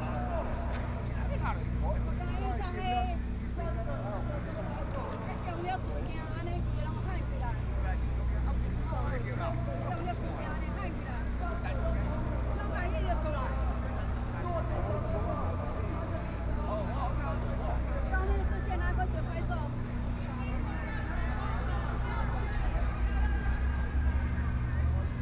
government dispatched police to deal with students, Protest, University students gathered to protest the government, Occupied Executive Yuan
Riot police in violent protests expelled students, All people with a strong jet of water rushed, Riot police used tear gas to attack people and students
Binaural recordings